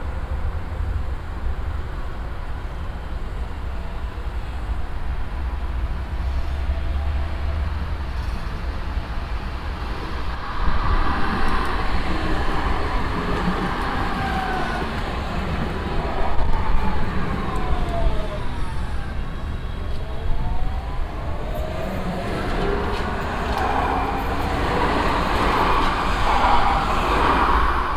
cologne, merheim, hohensyburgstr, tram station and gate
Cologne, Germany